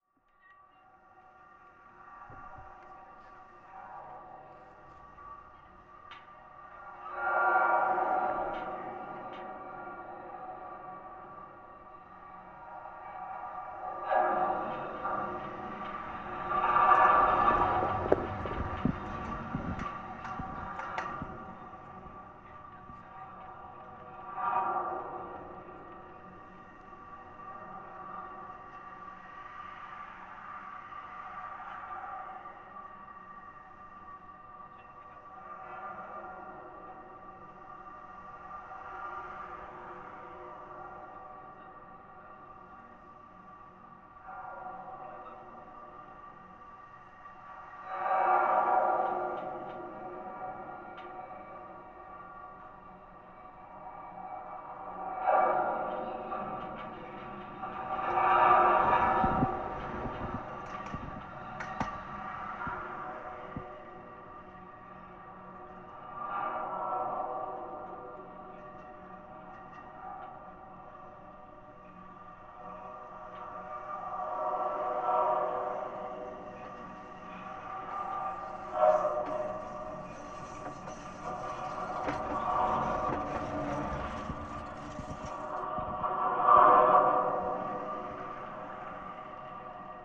Tallinn, Baltijaam tram pole - Tallinn, Baltijaam tram pole (recorded w/ kessu karu)
hidden sounds, contact mics on a hollow metal pole holding up the wires that power trams outside Tallinns main train station